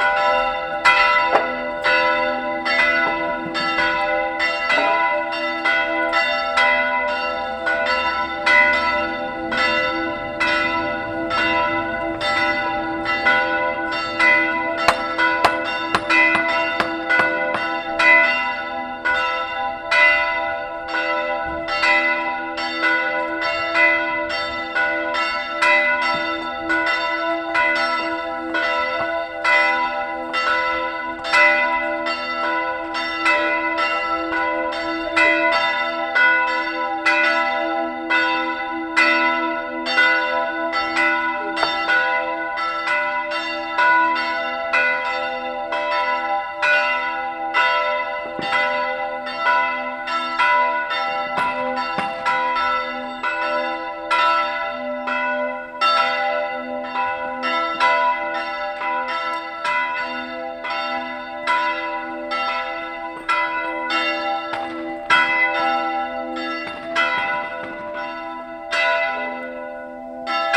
St. Peter and Paul Roman Catholic Church (Rooma Katoliku Kirik), bells, workers lay cobblestones, church door